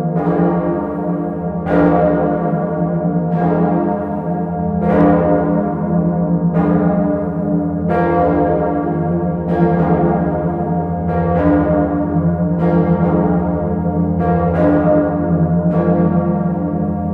Sens, France - Savinienne et Potentienne
The two very big bells of the Sens cathedral.
0:46 mn : the first stroke. The first bell, The Savinienne.
2:33 : the second bell, the Potentienne.
Recorded into the tower by -17°C ! It was extremely loud (135 dB, but it was said to us). Doves were flattened on the ground ! The first time they rang after 35 years of silence, an old person was crying, thinking the pope was dead.
These two bells are the few ones on the top of bells. Optimally to listen very loud, as it was inside.
Record made with Nicolas Duseigne on the christmas mass.
24 December 2010